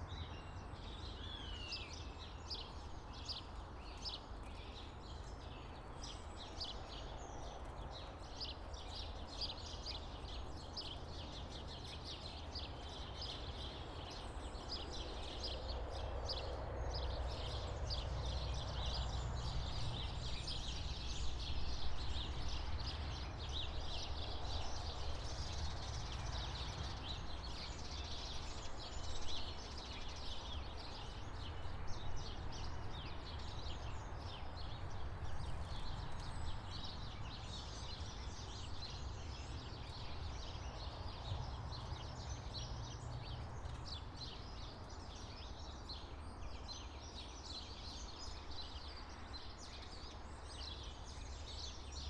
Coulby Newham, Middlesbrough, UK - Recording of Local Area
This is a recording of the area conducted over 15 minuets with a chnage of position every 5 this was done with a usb microphone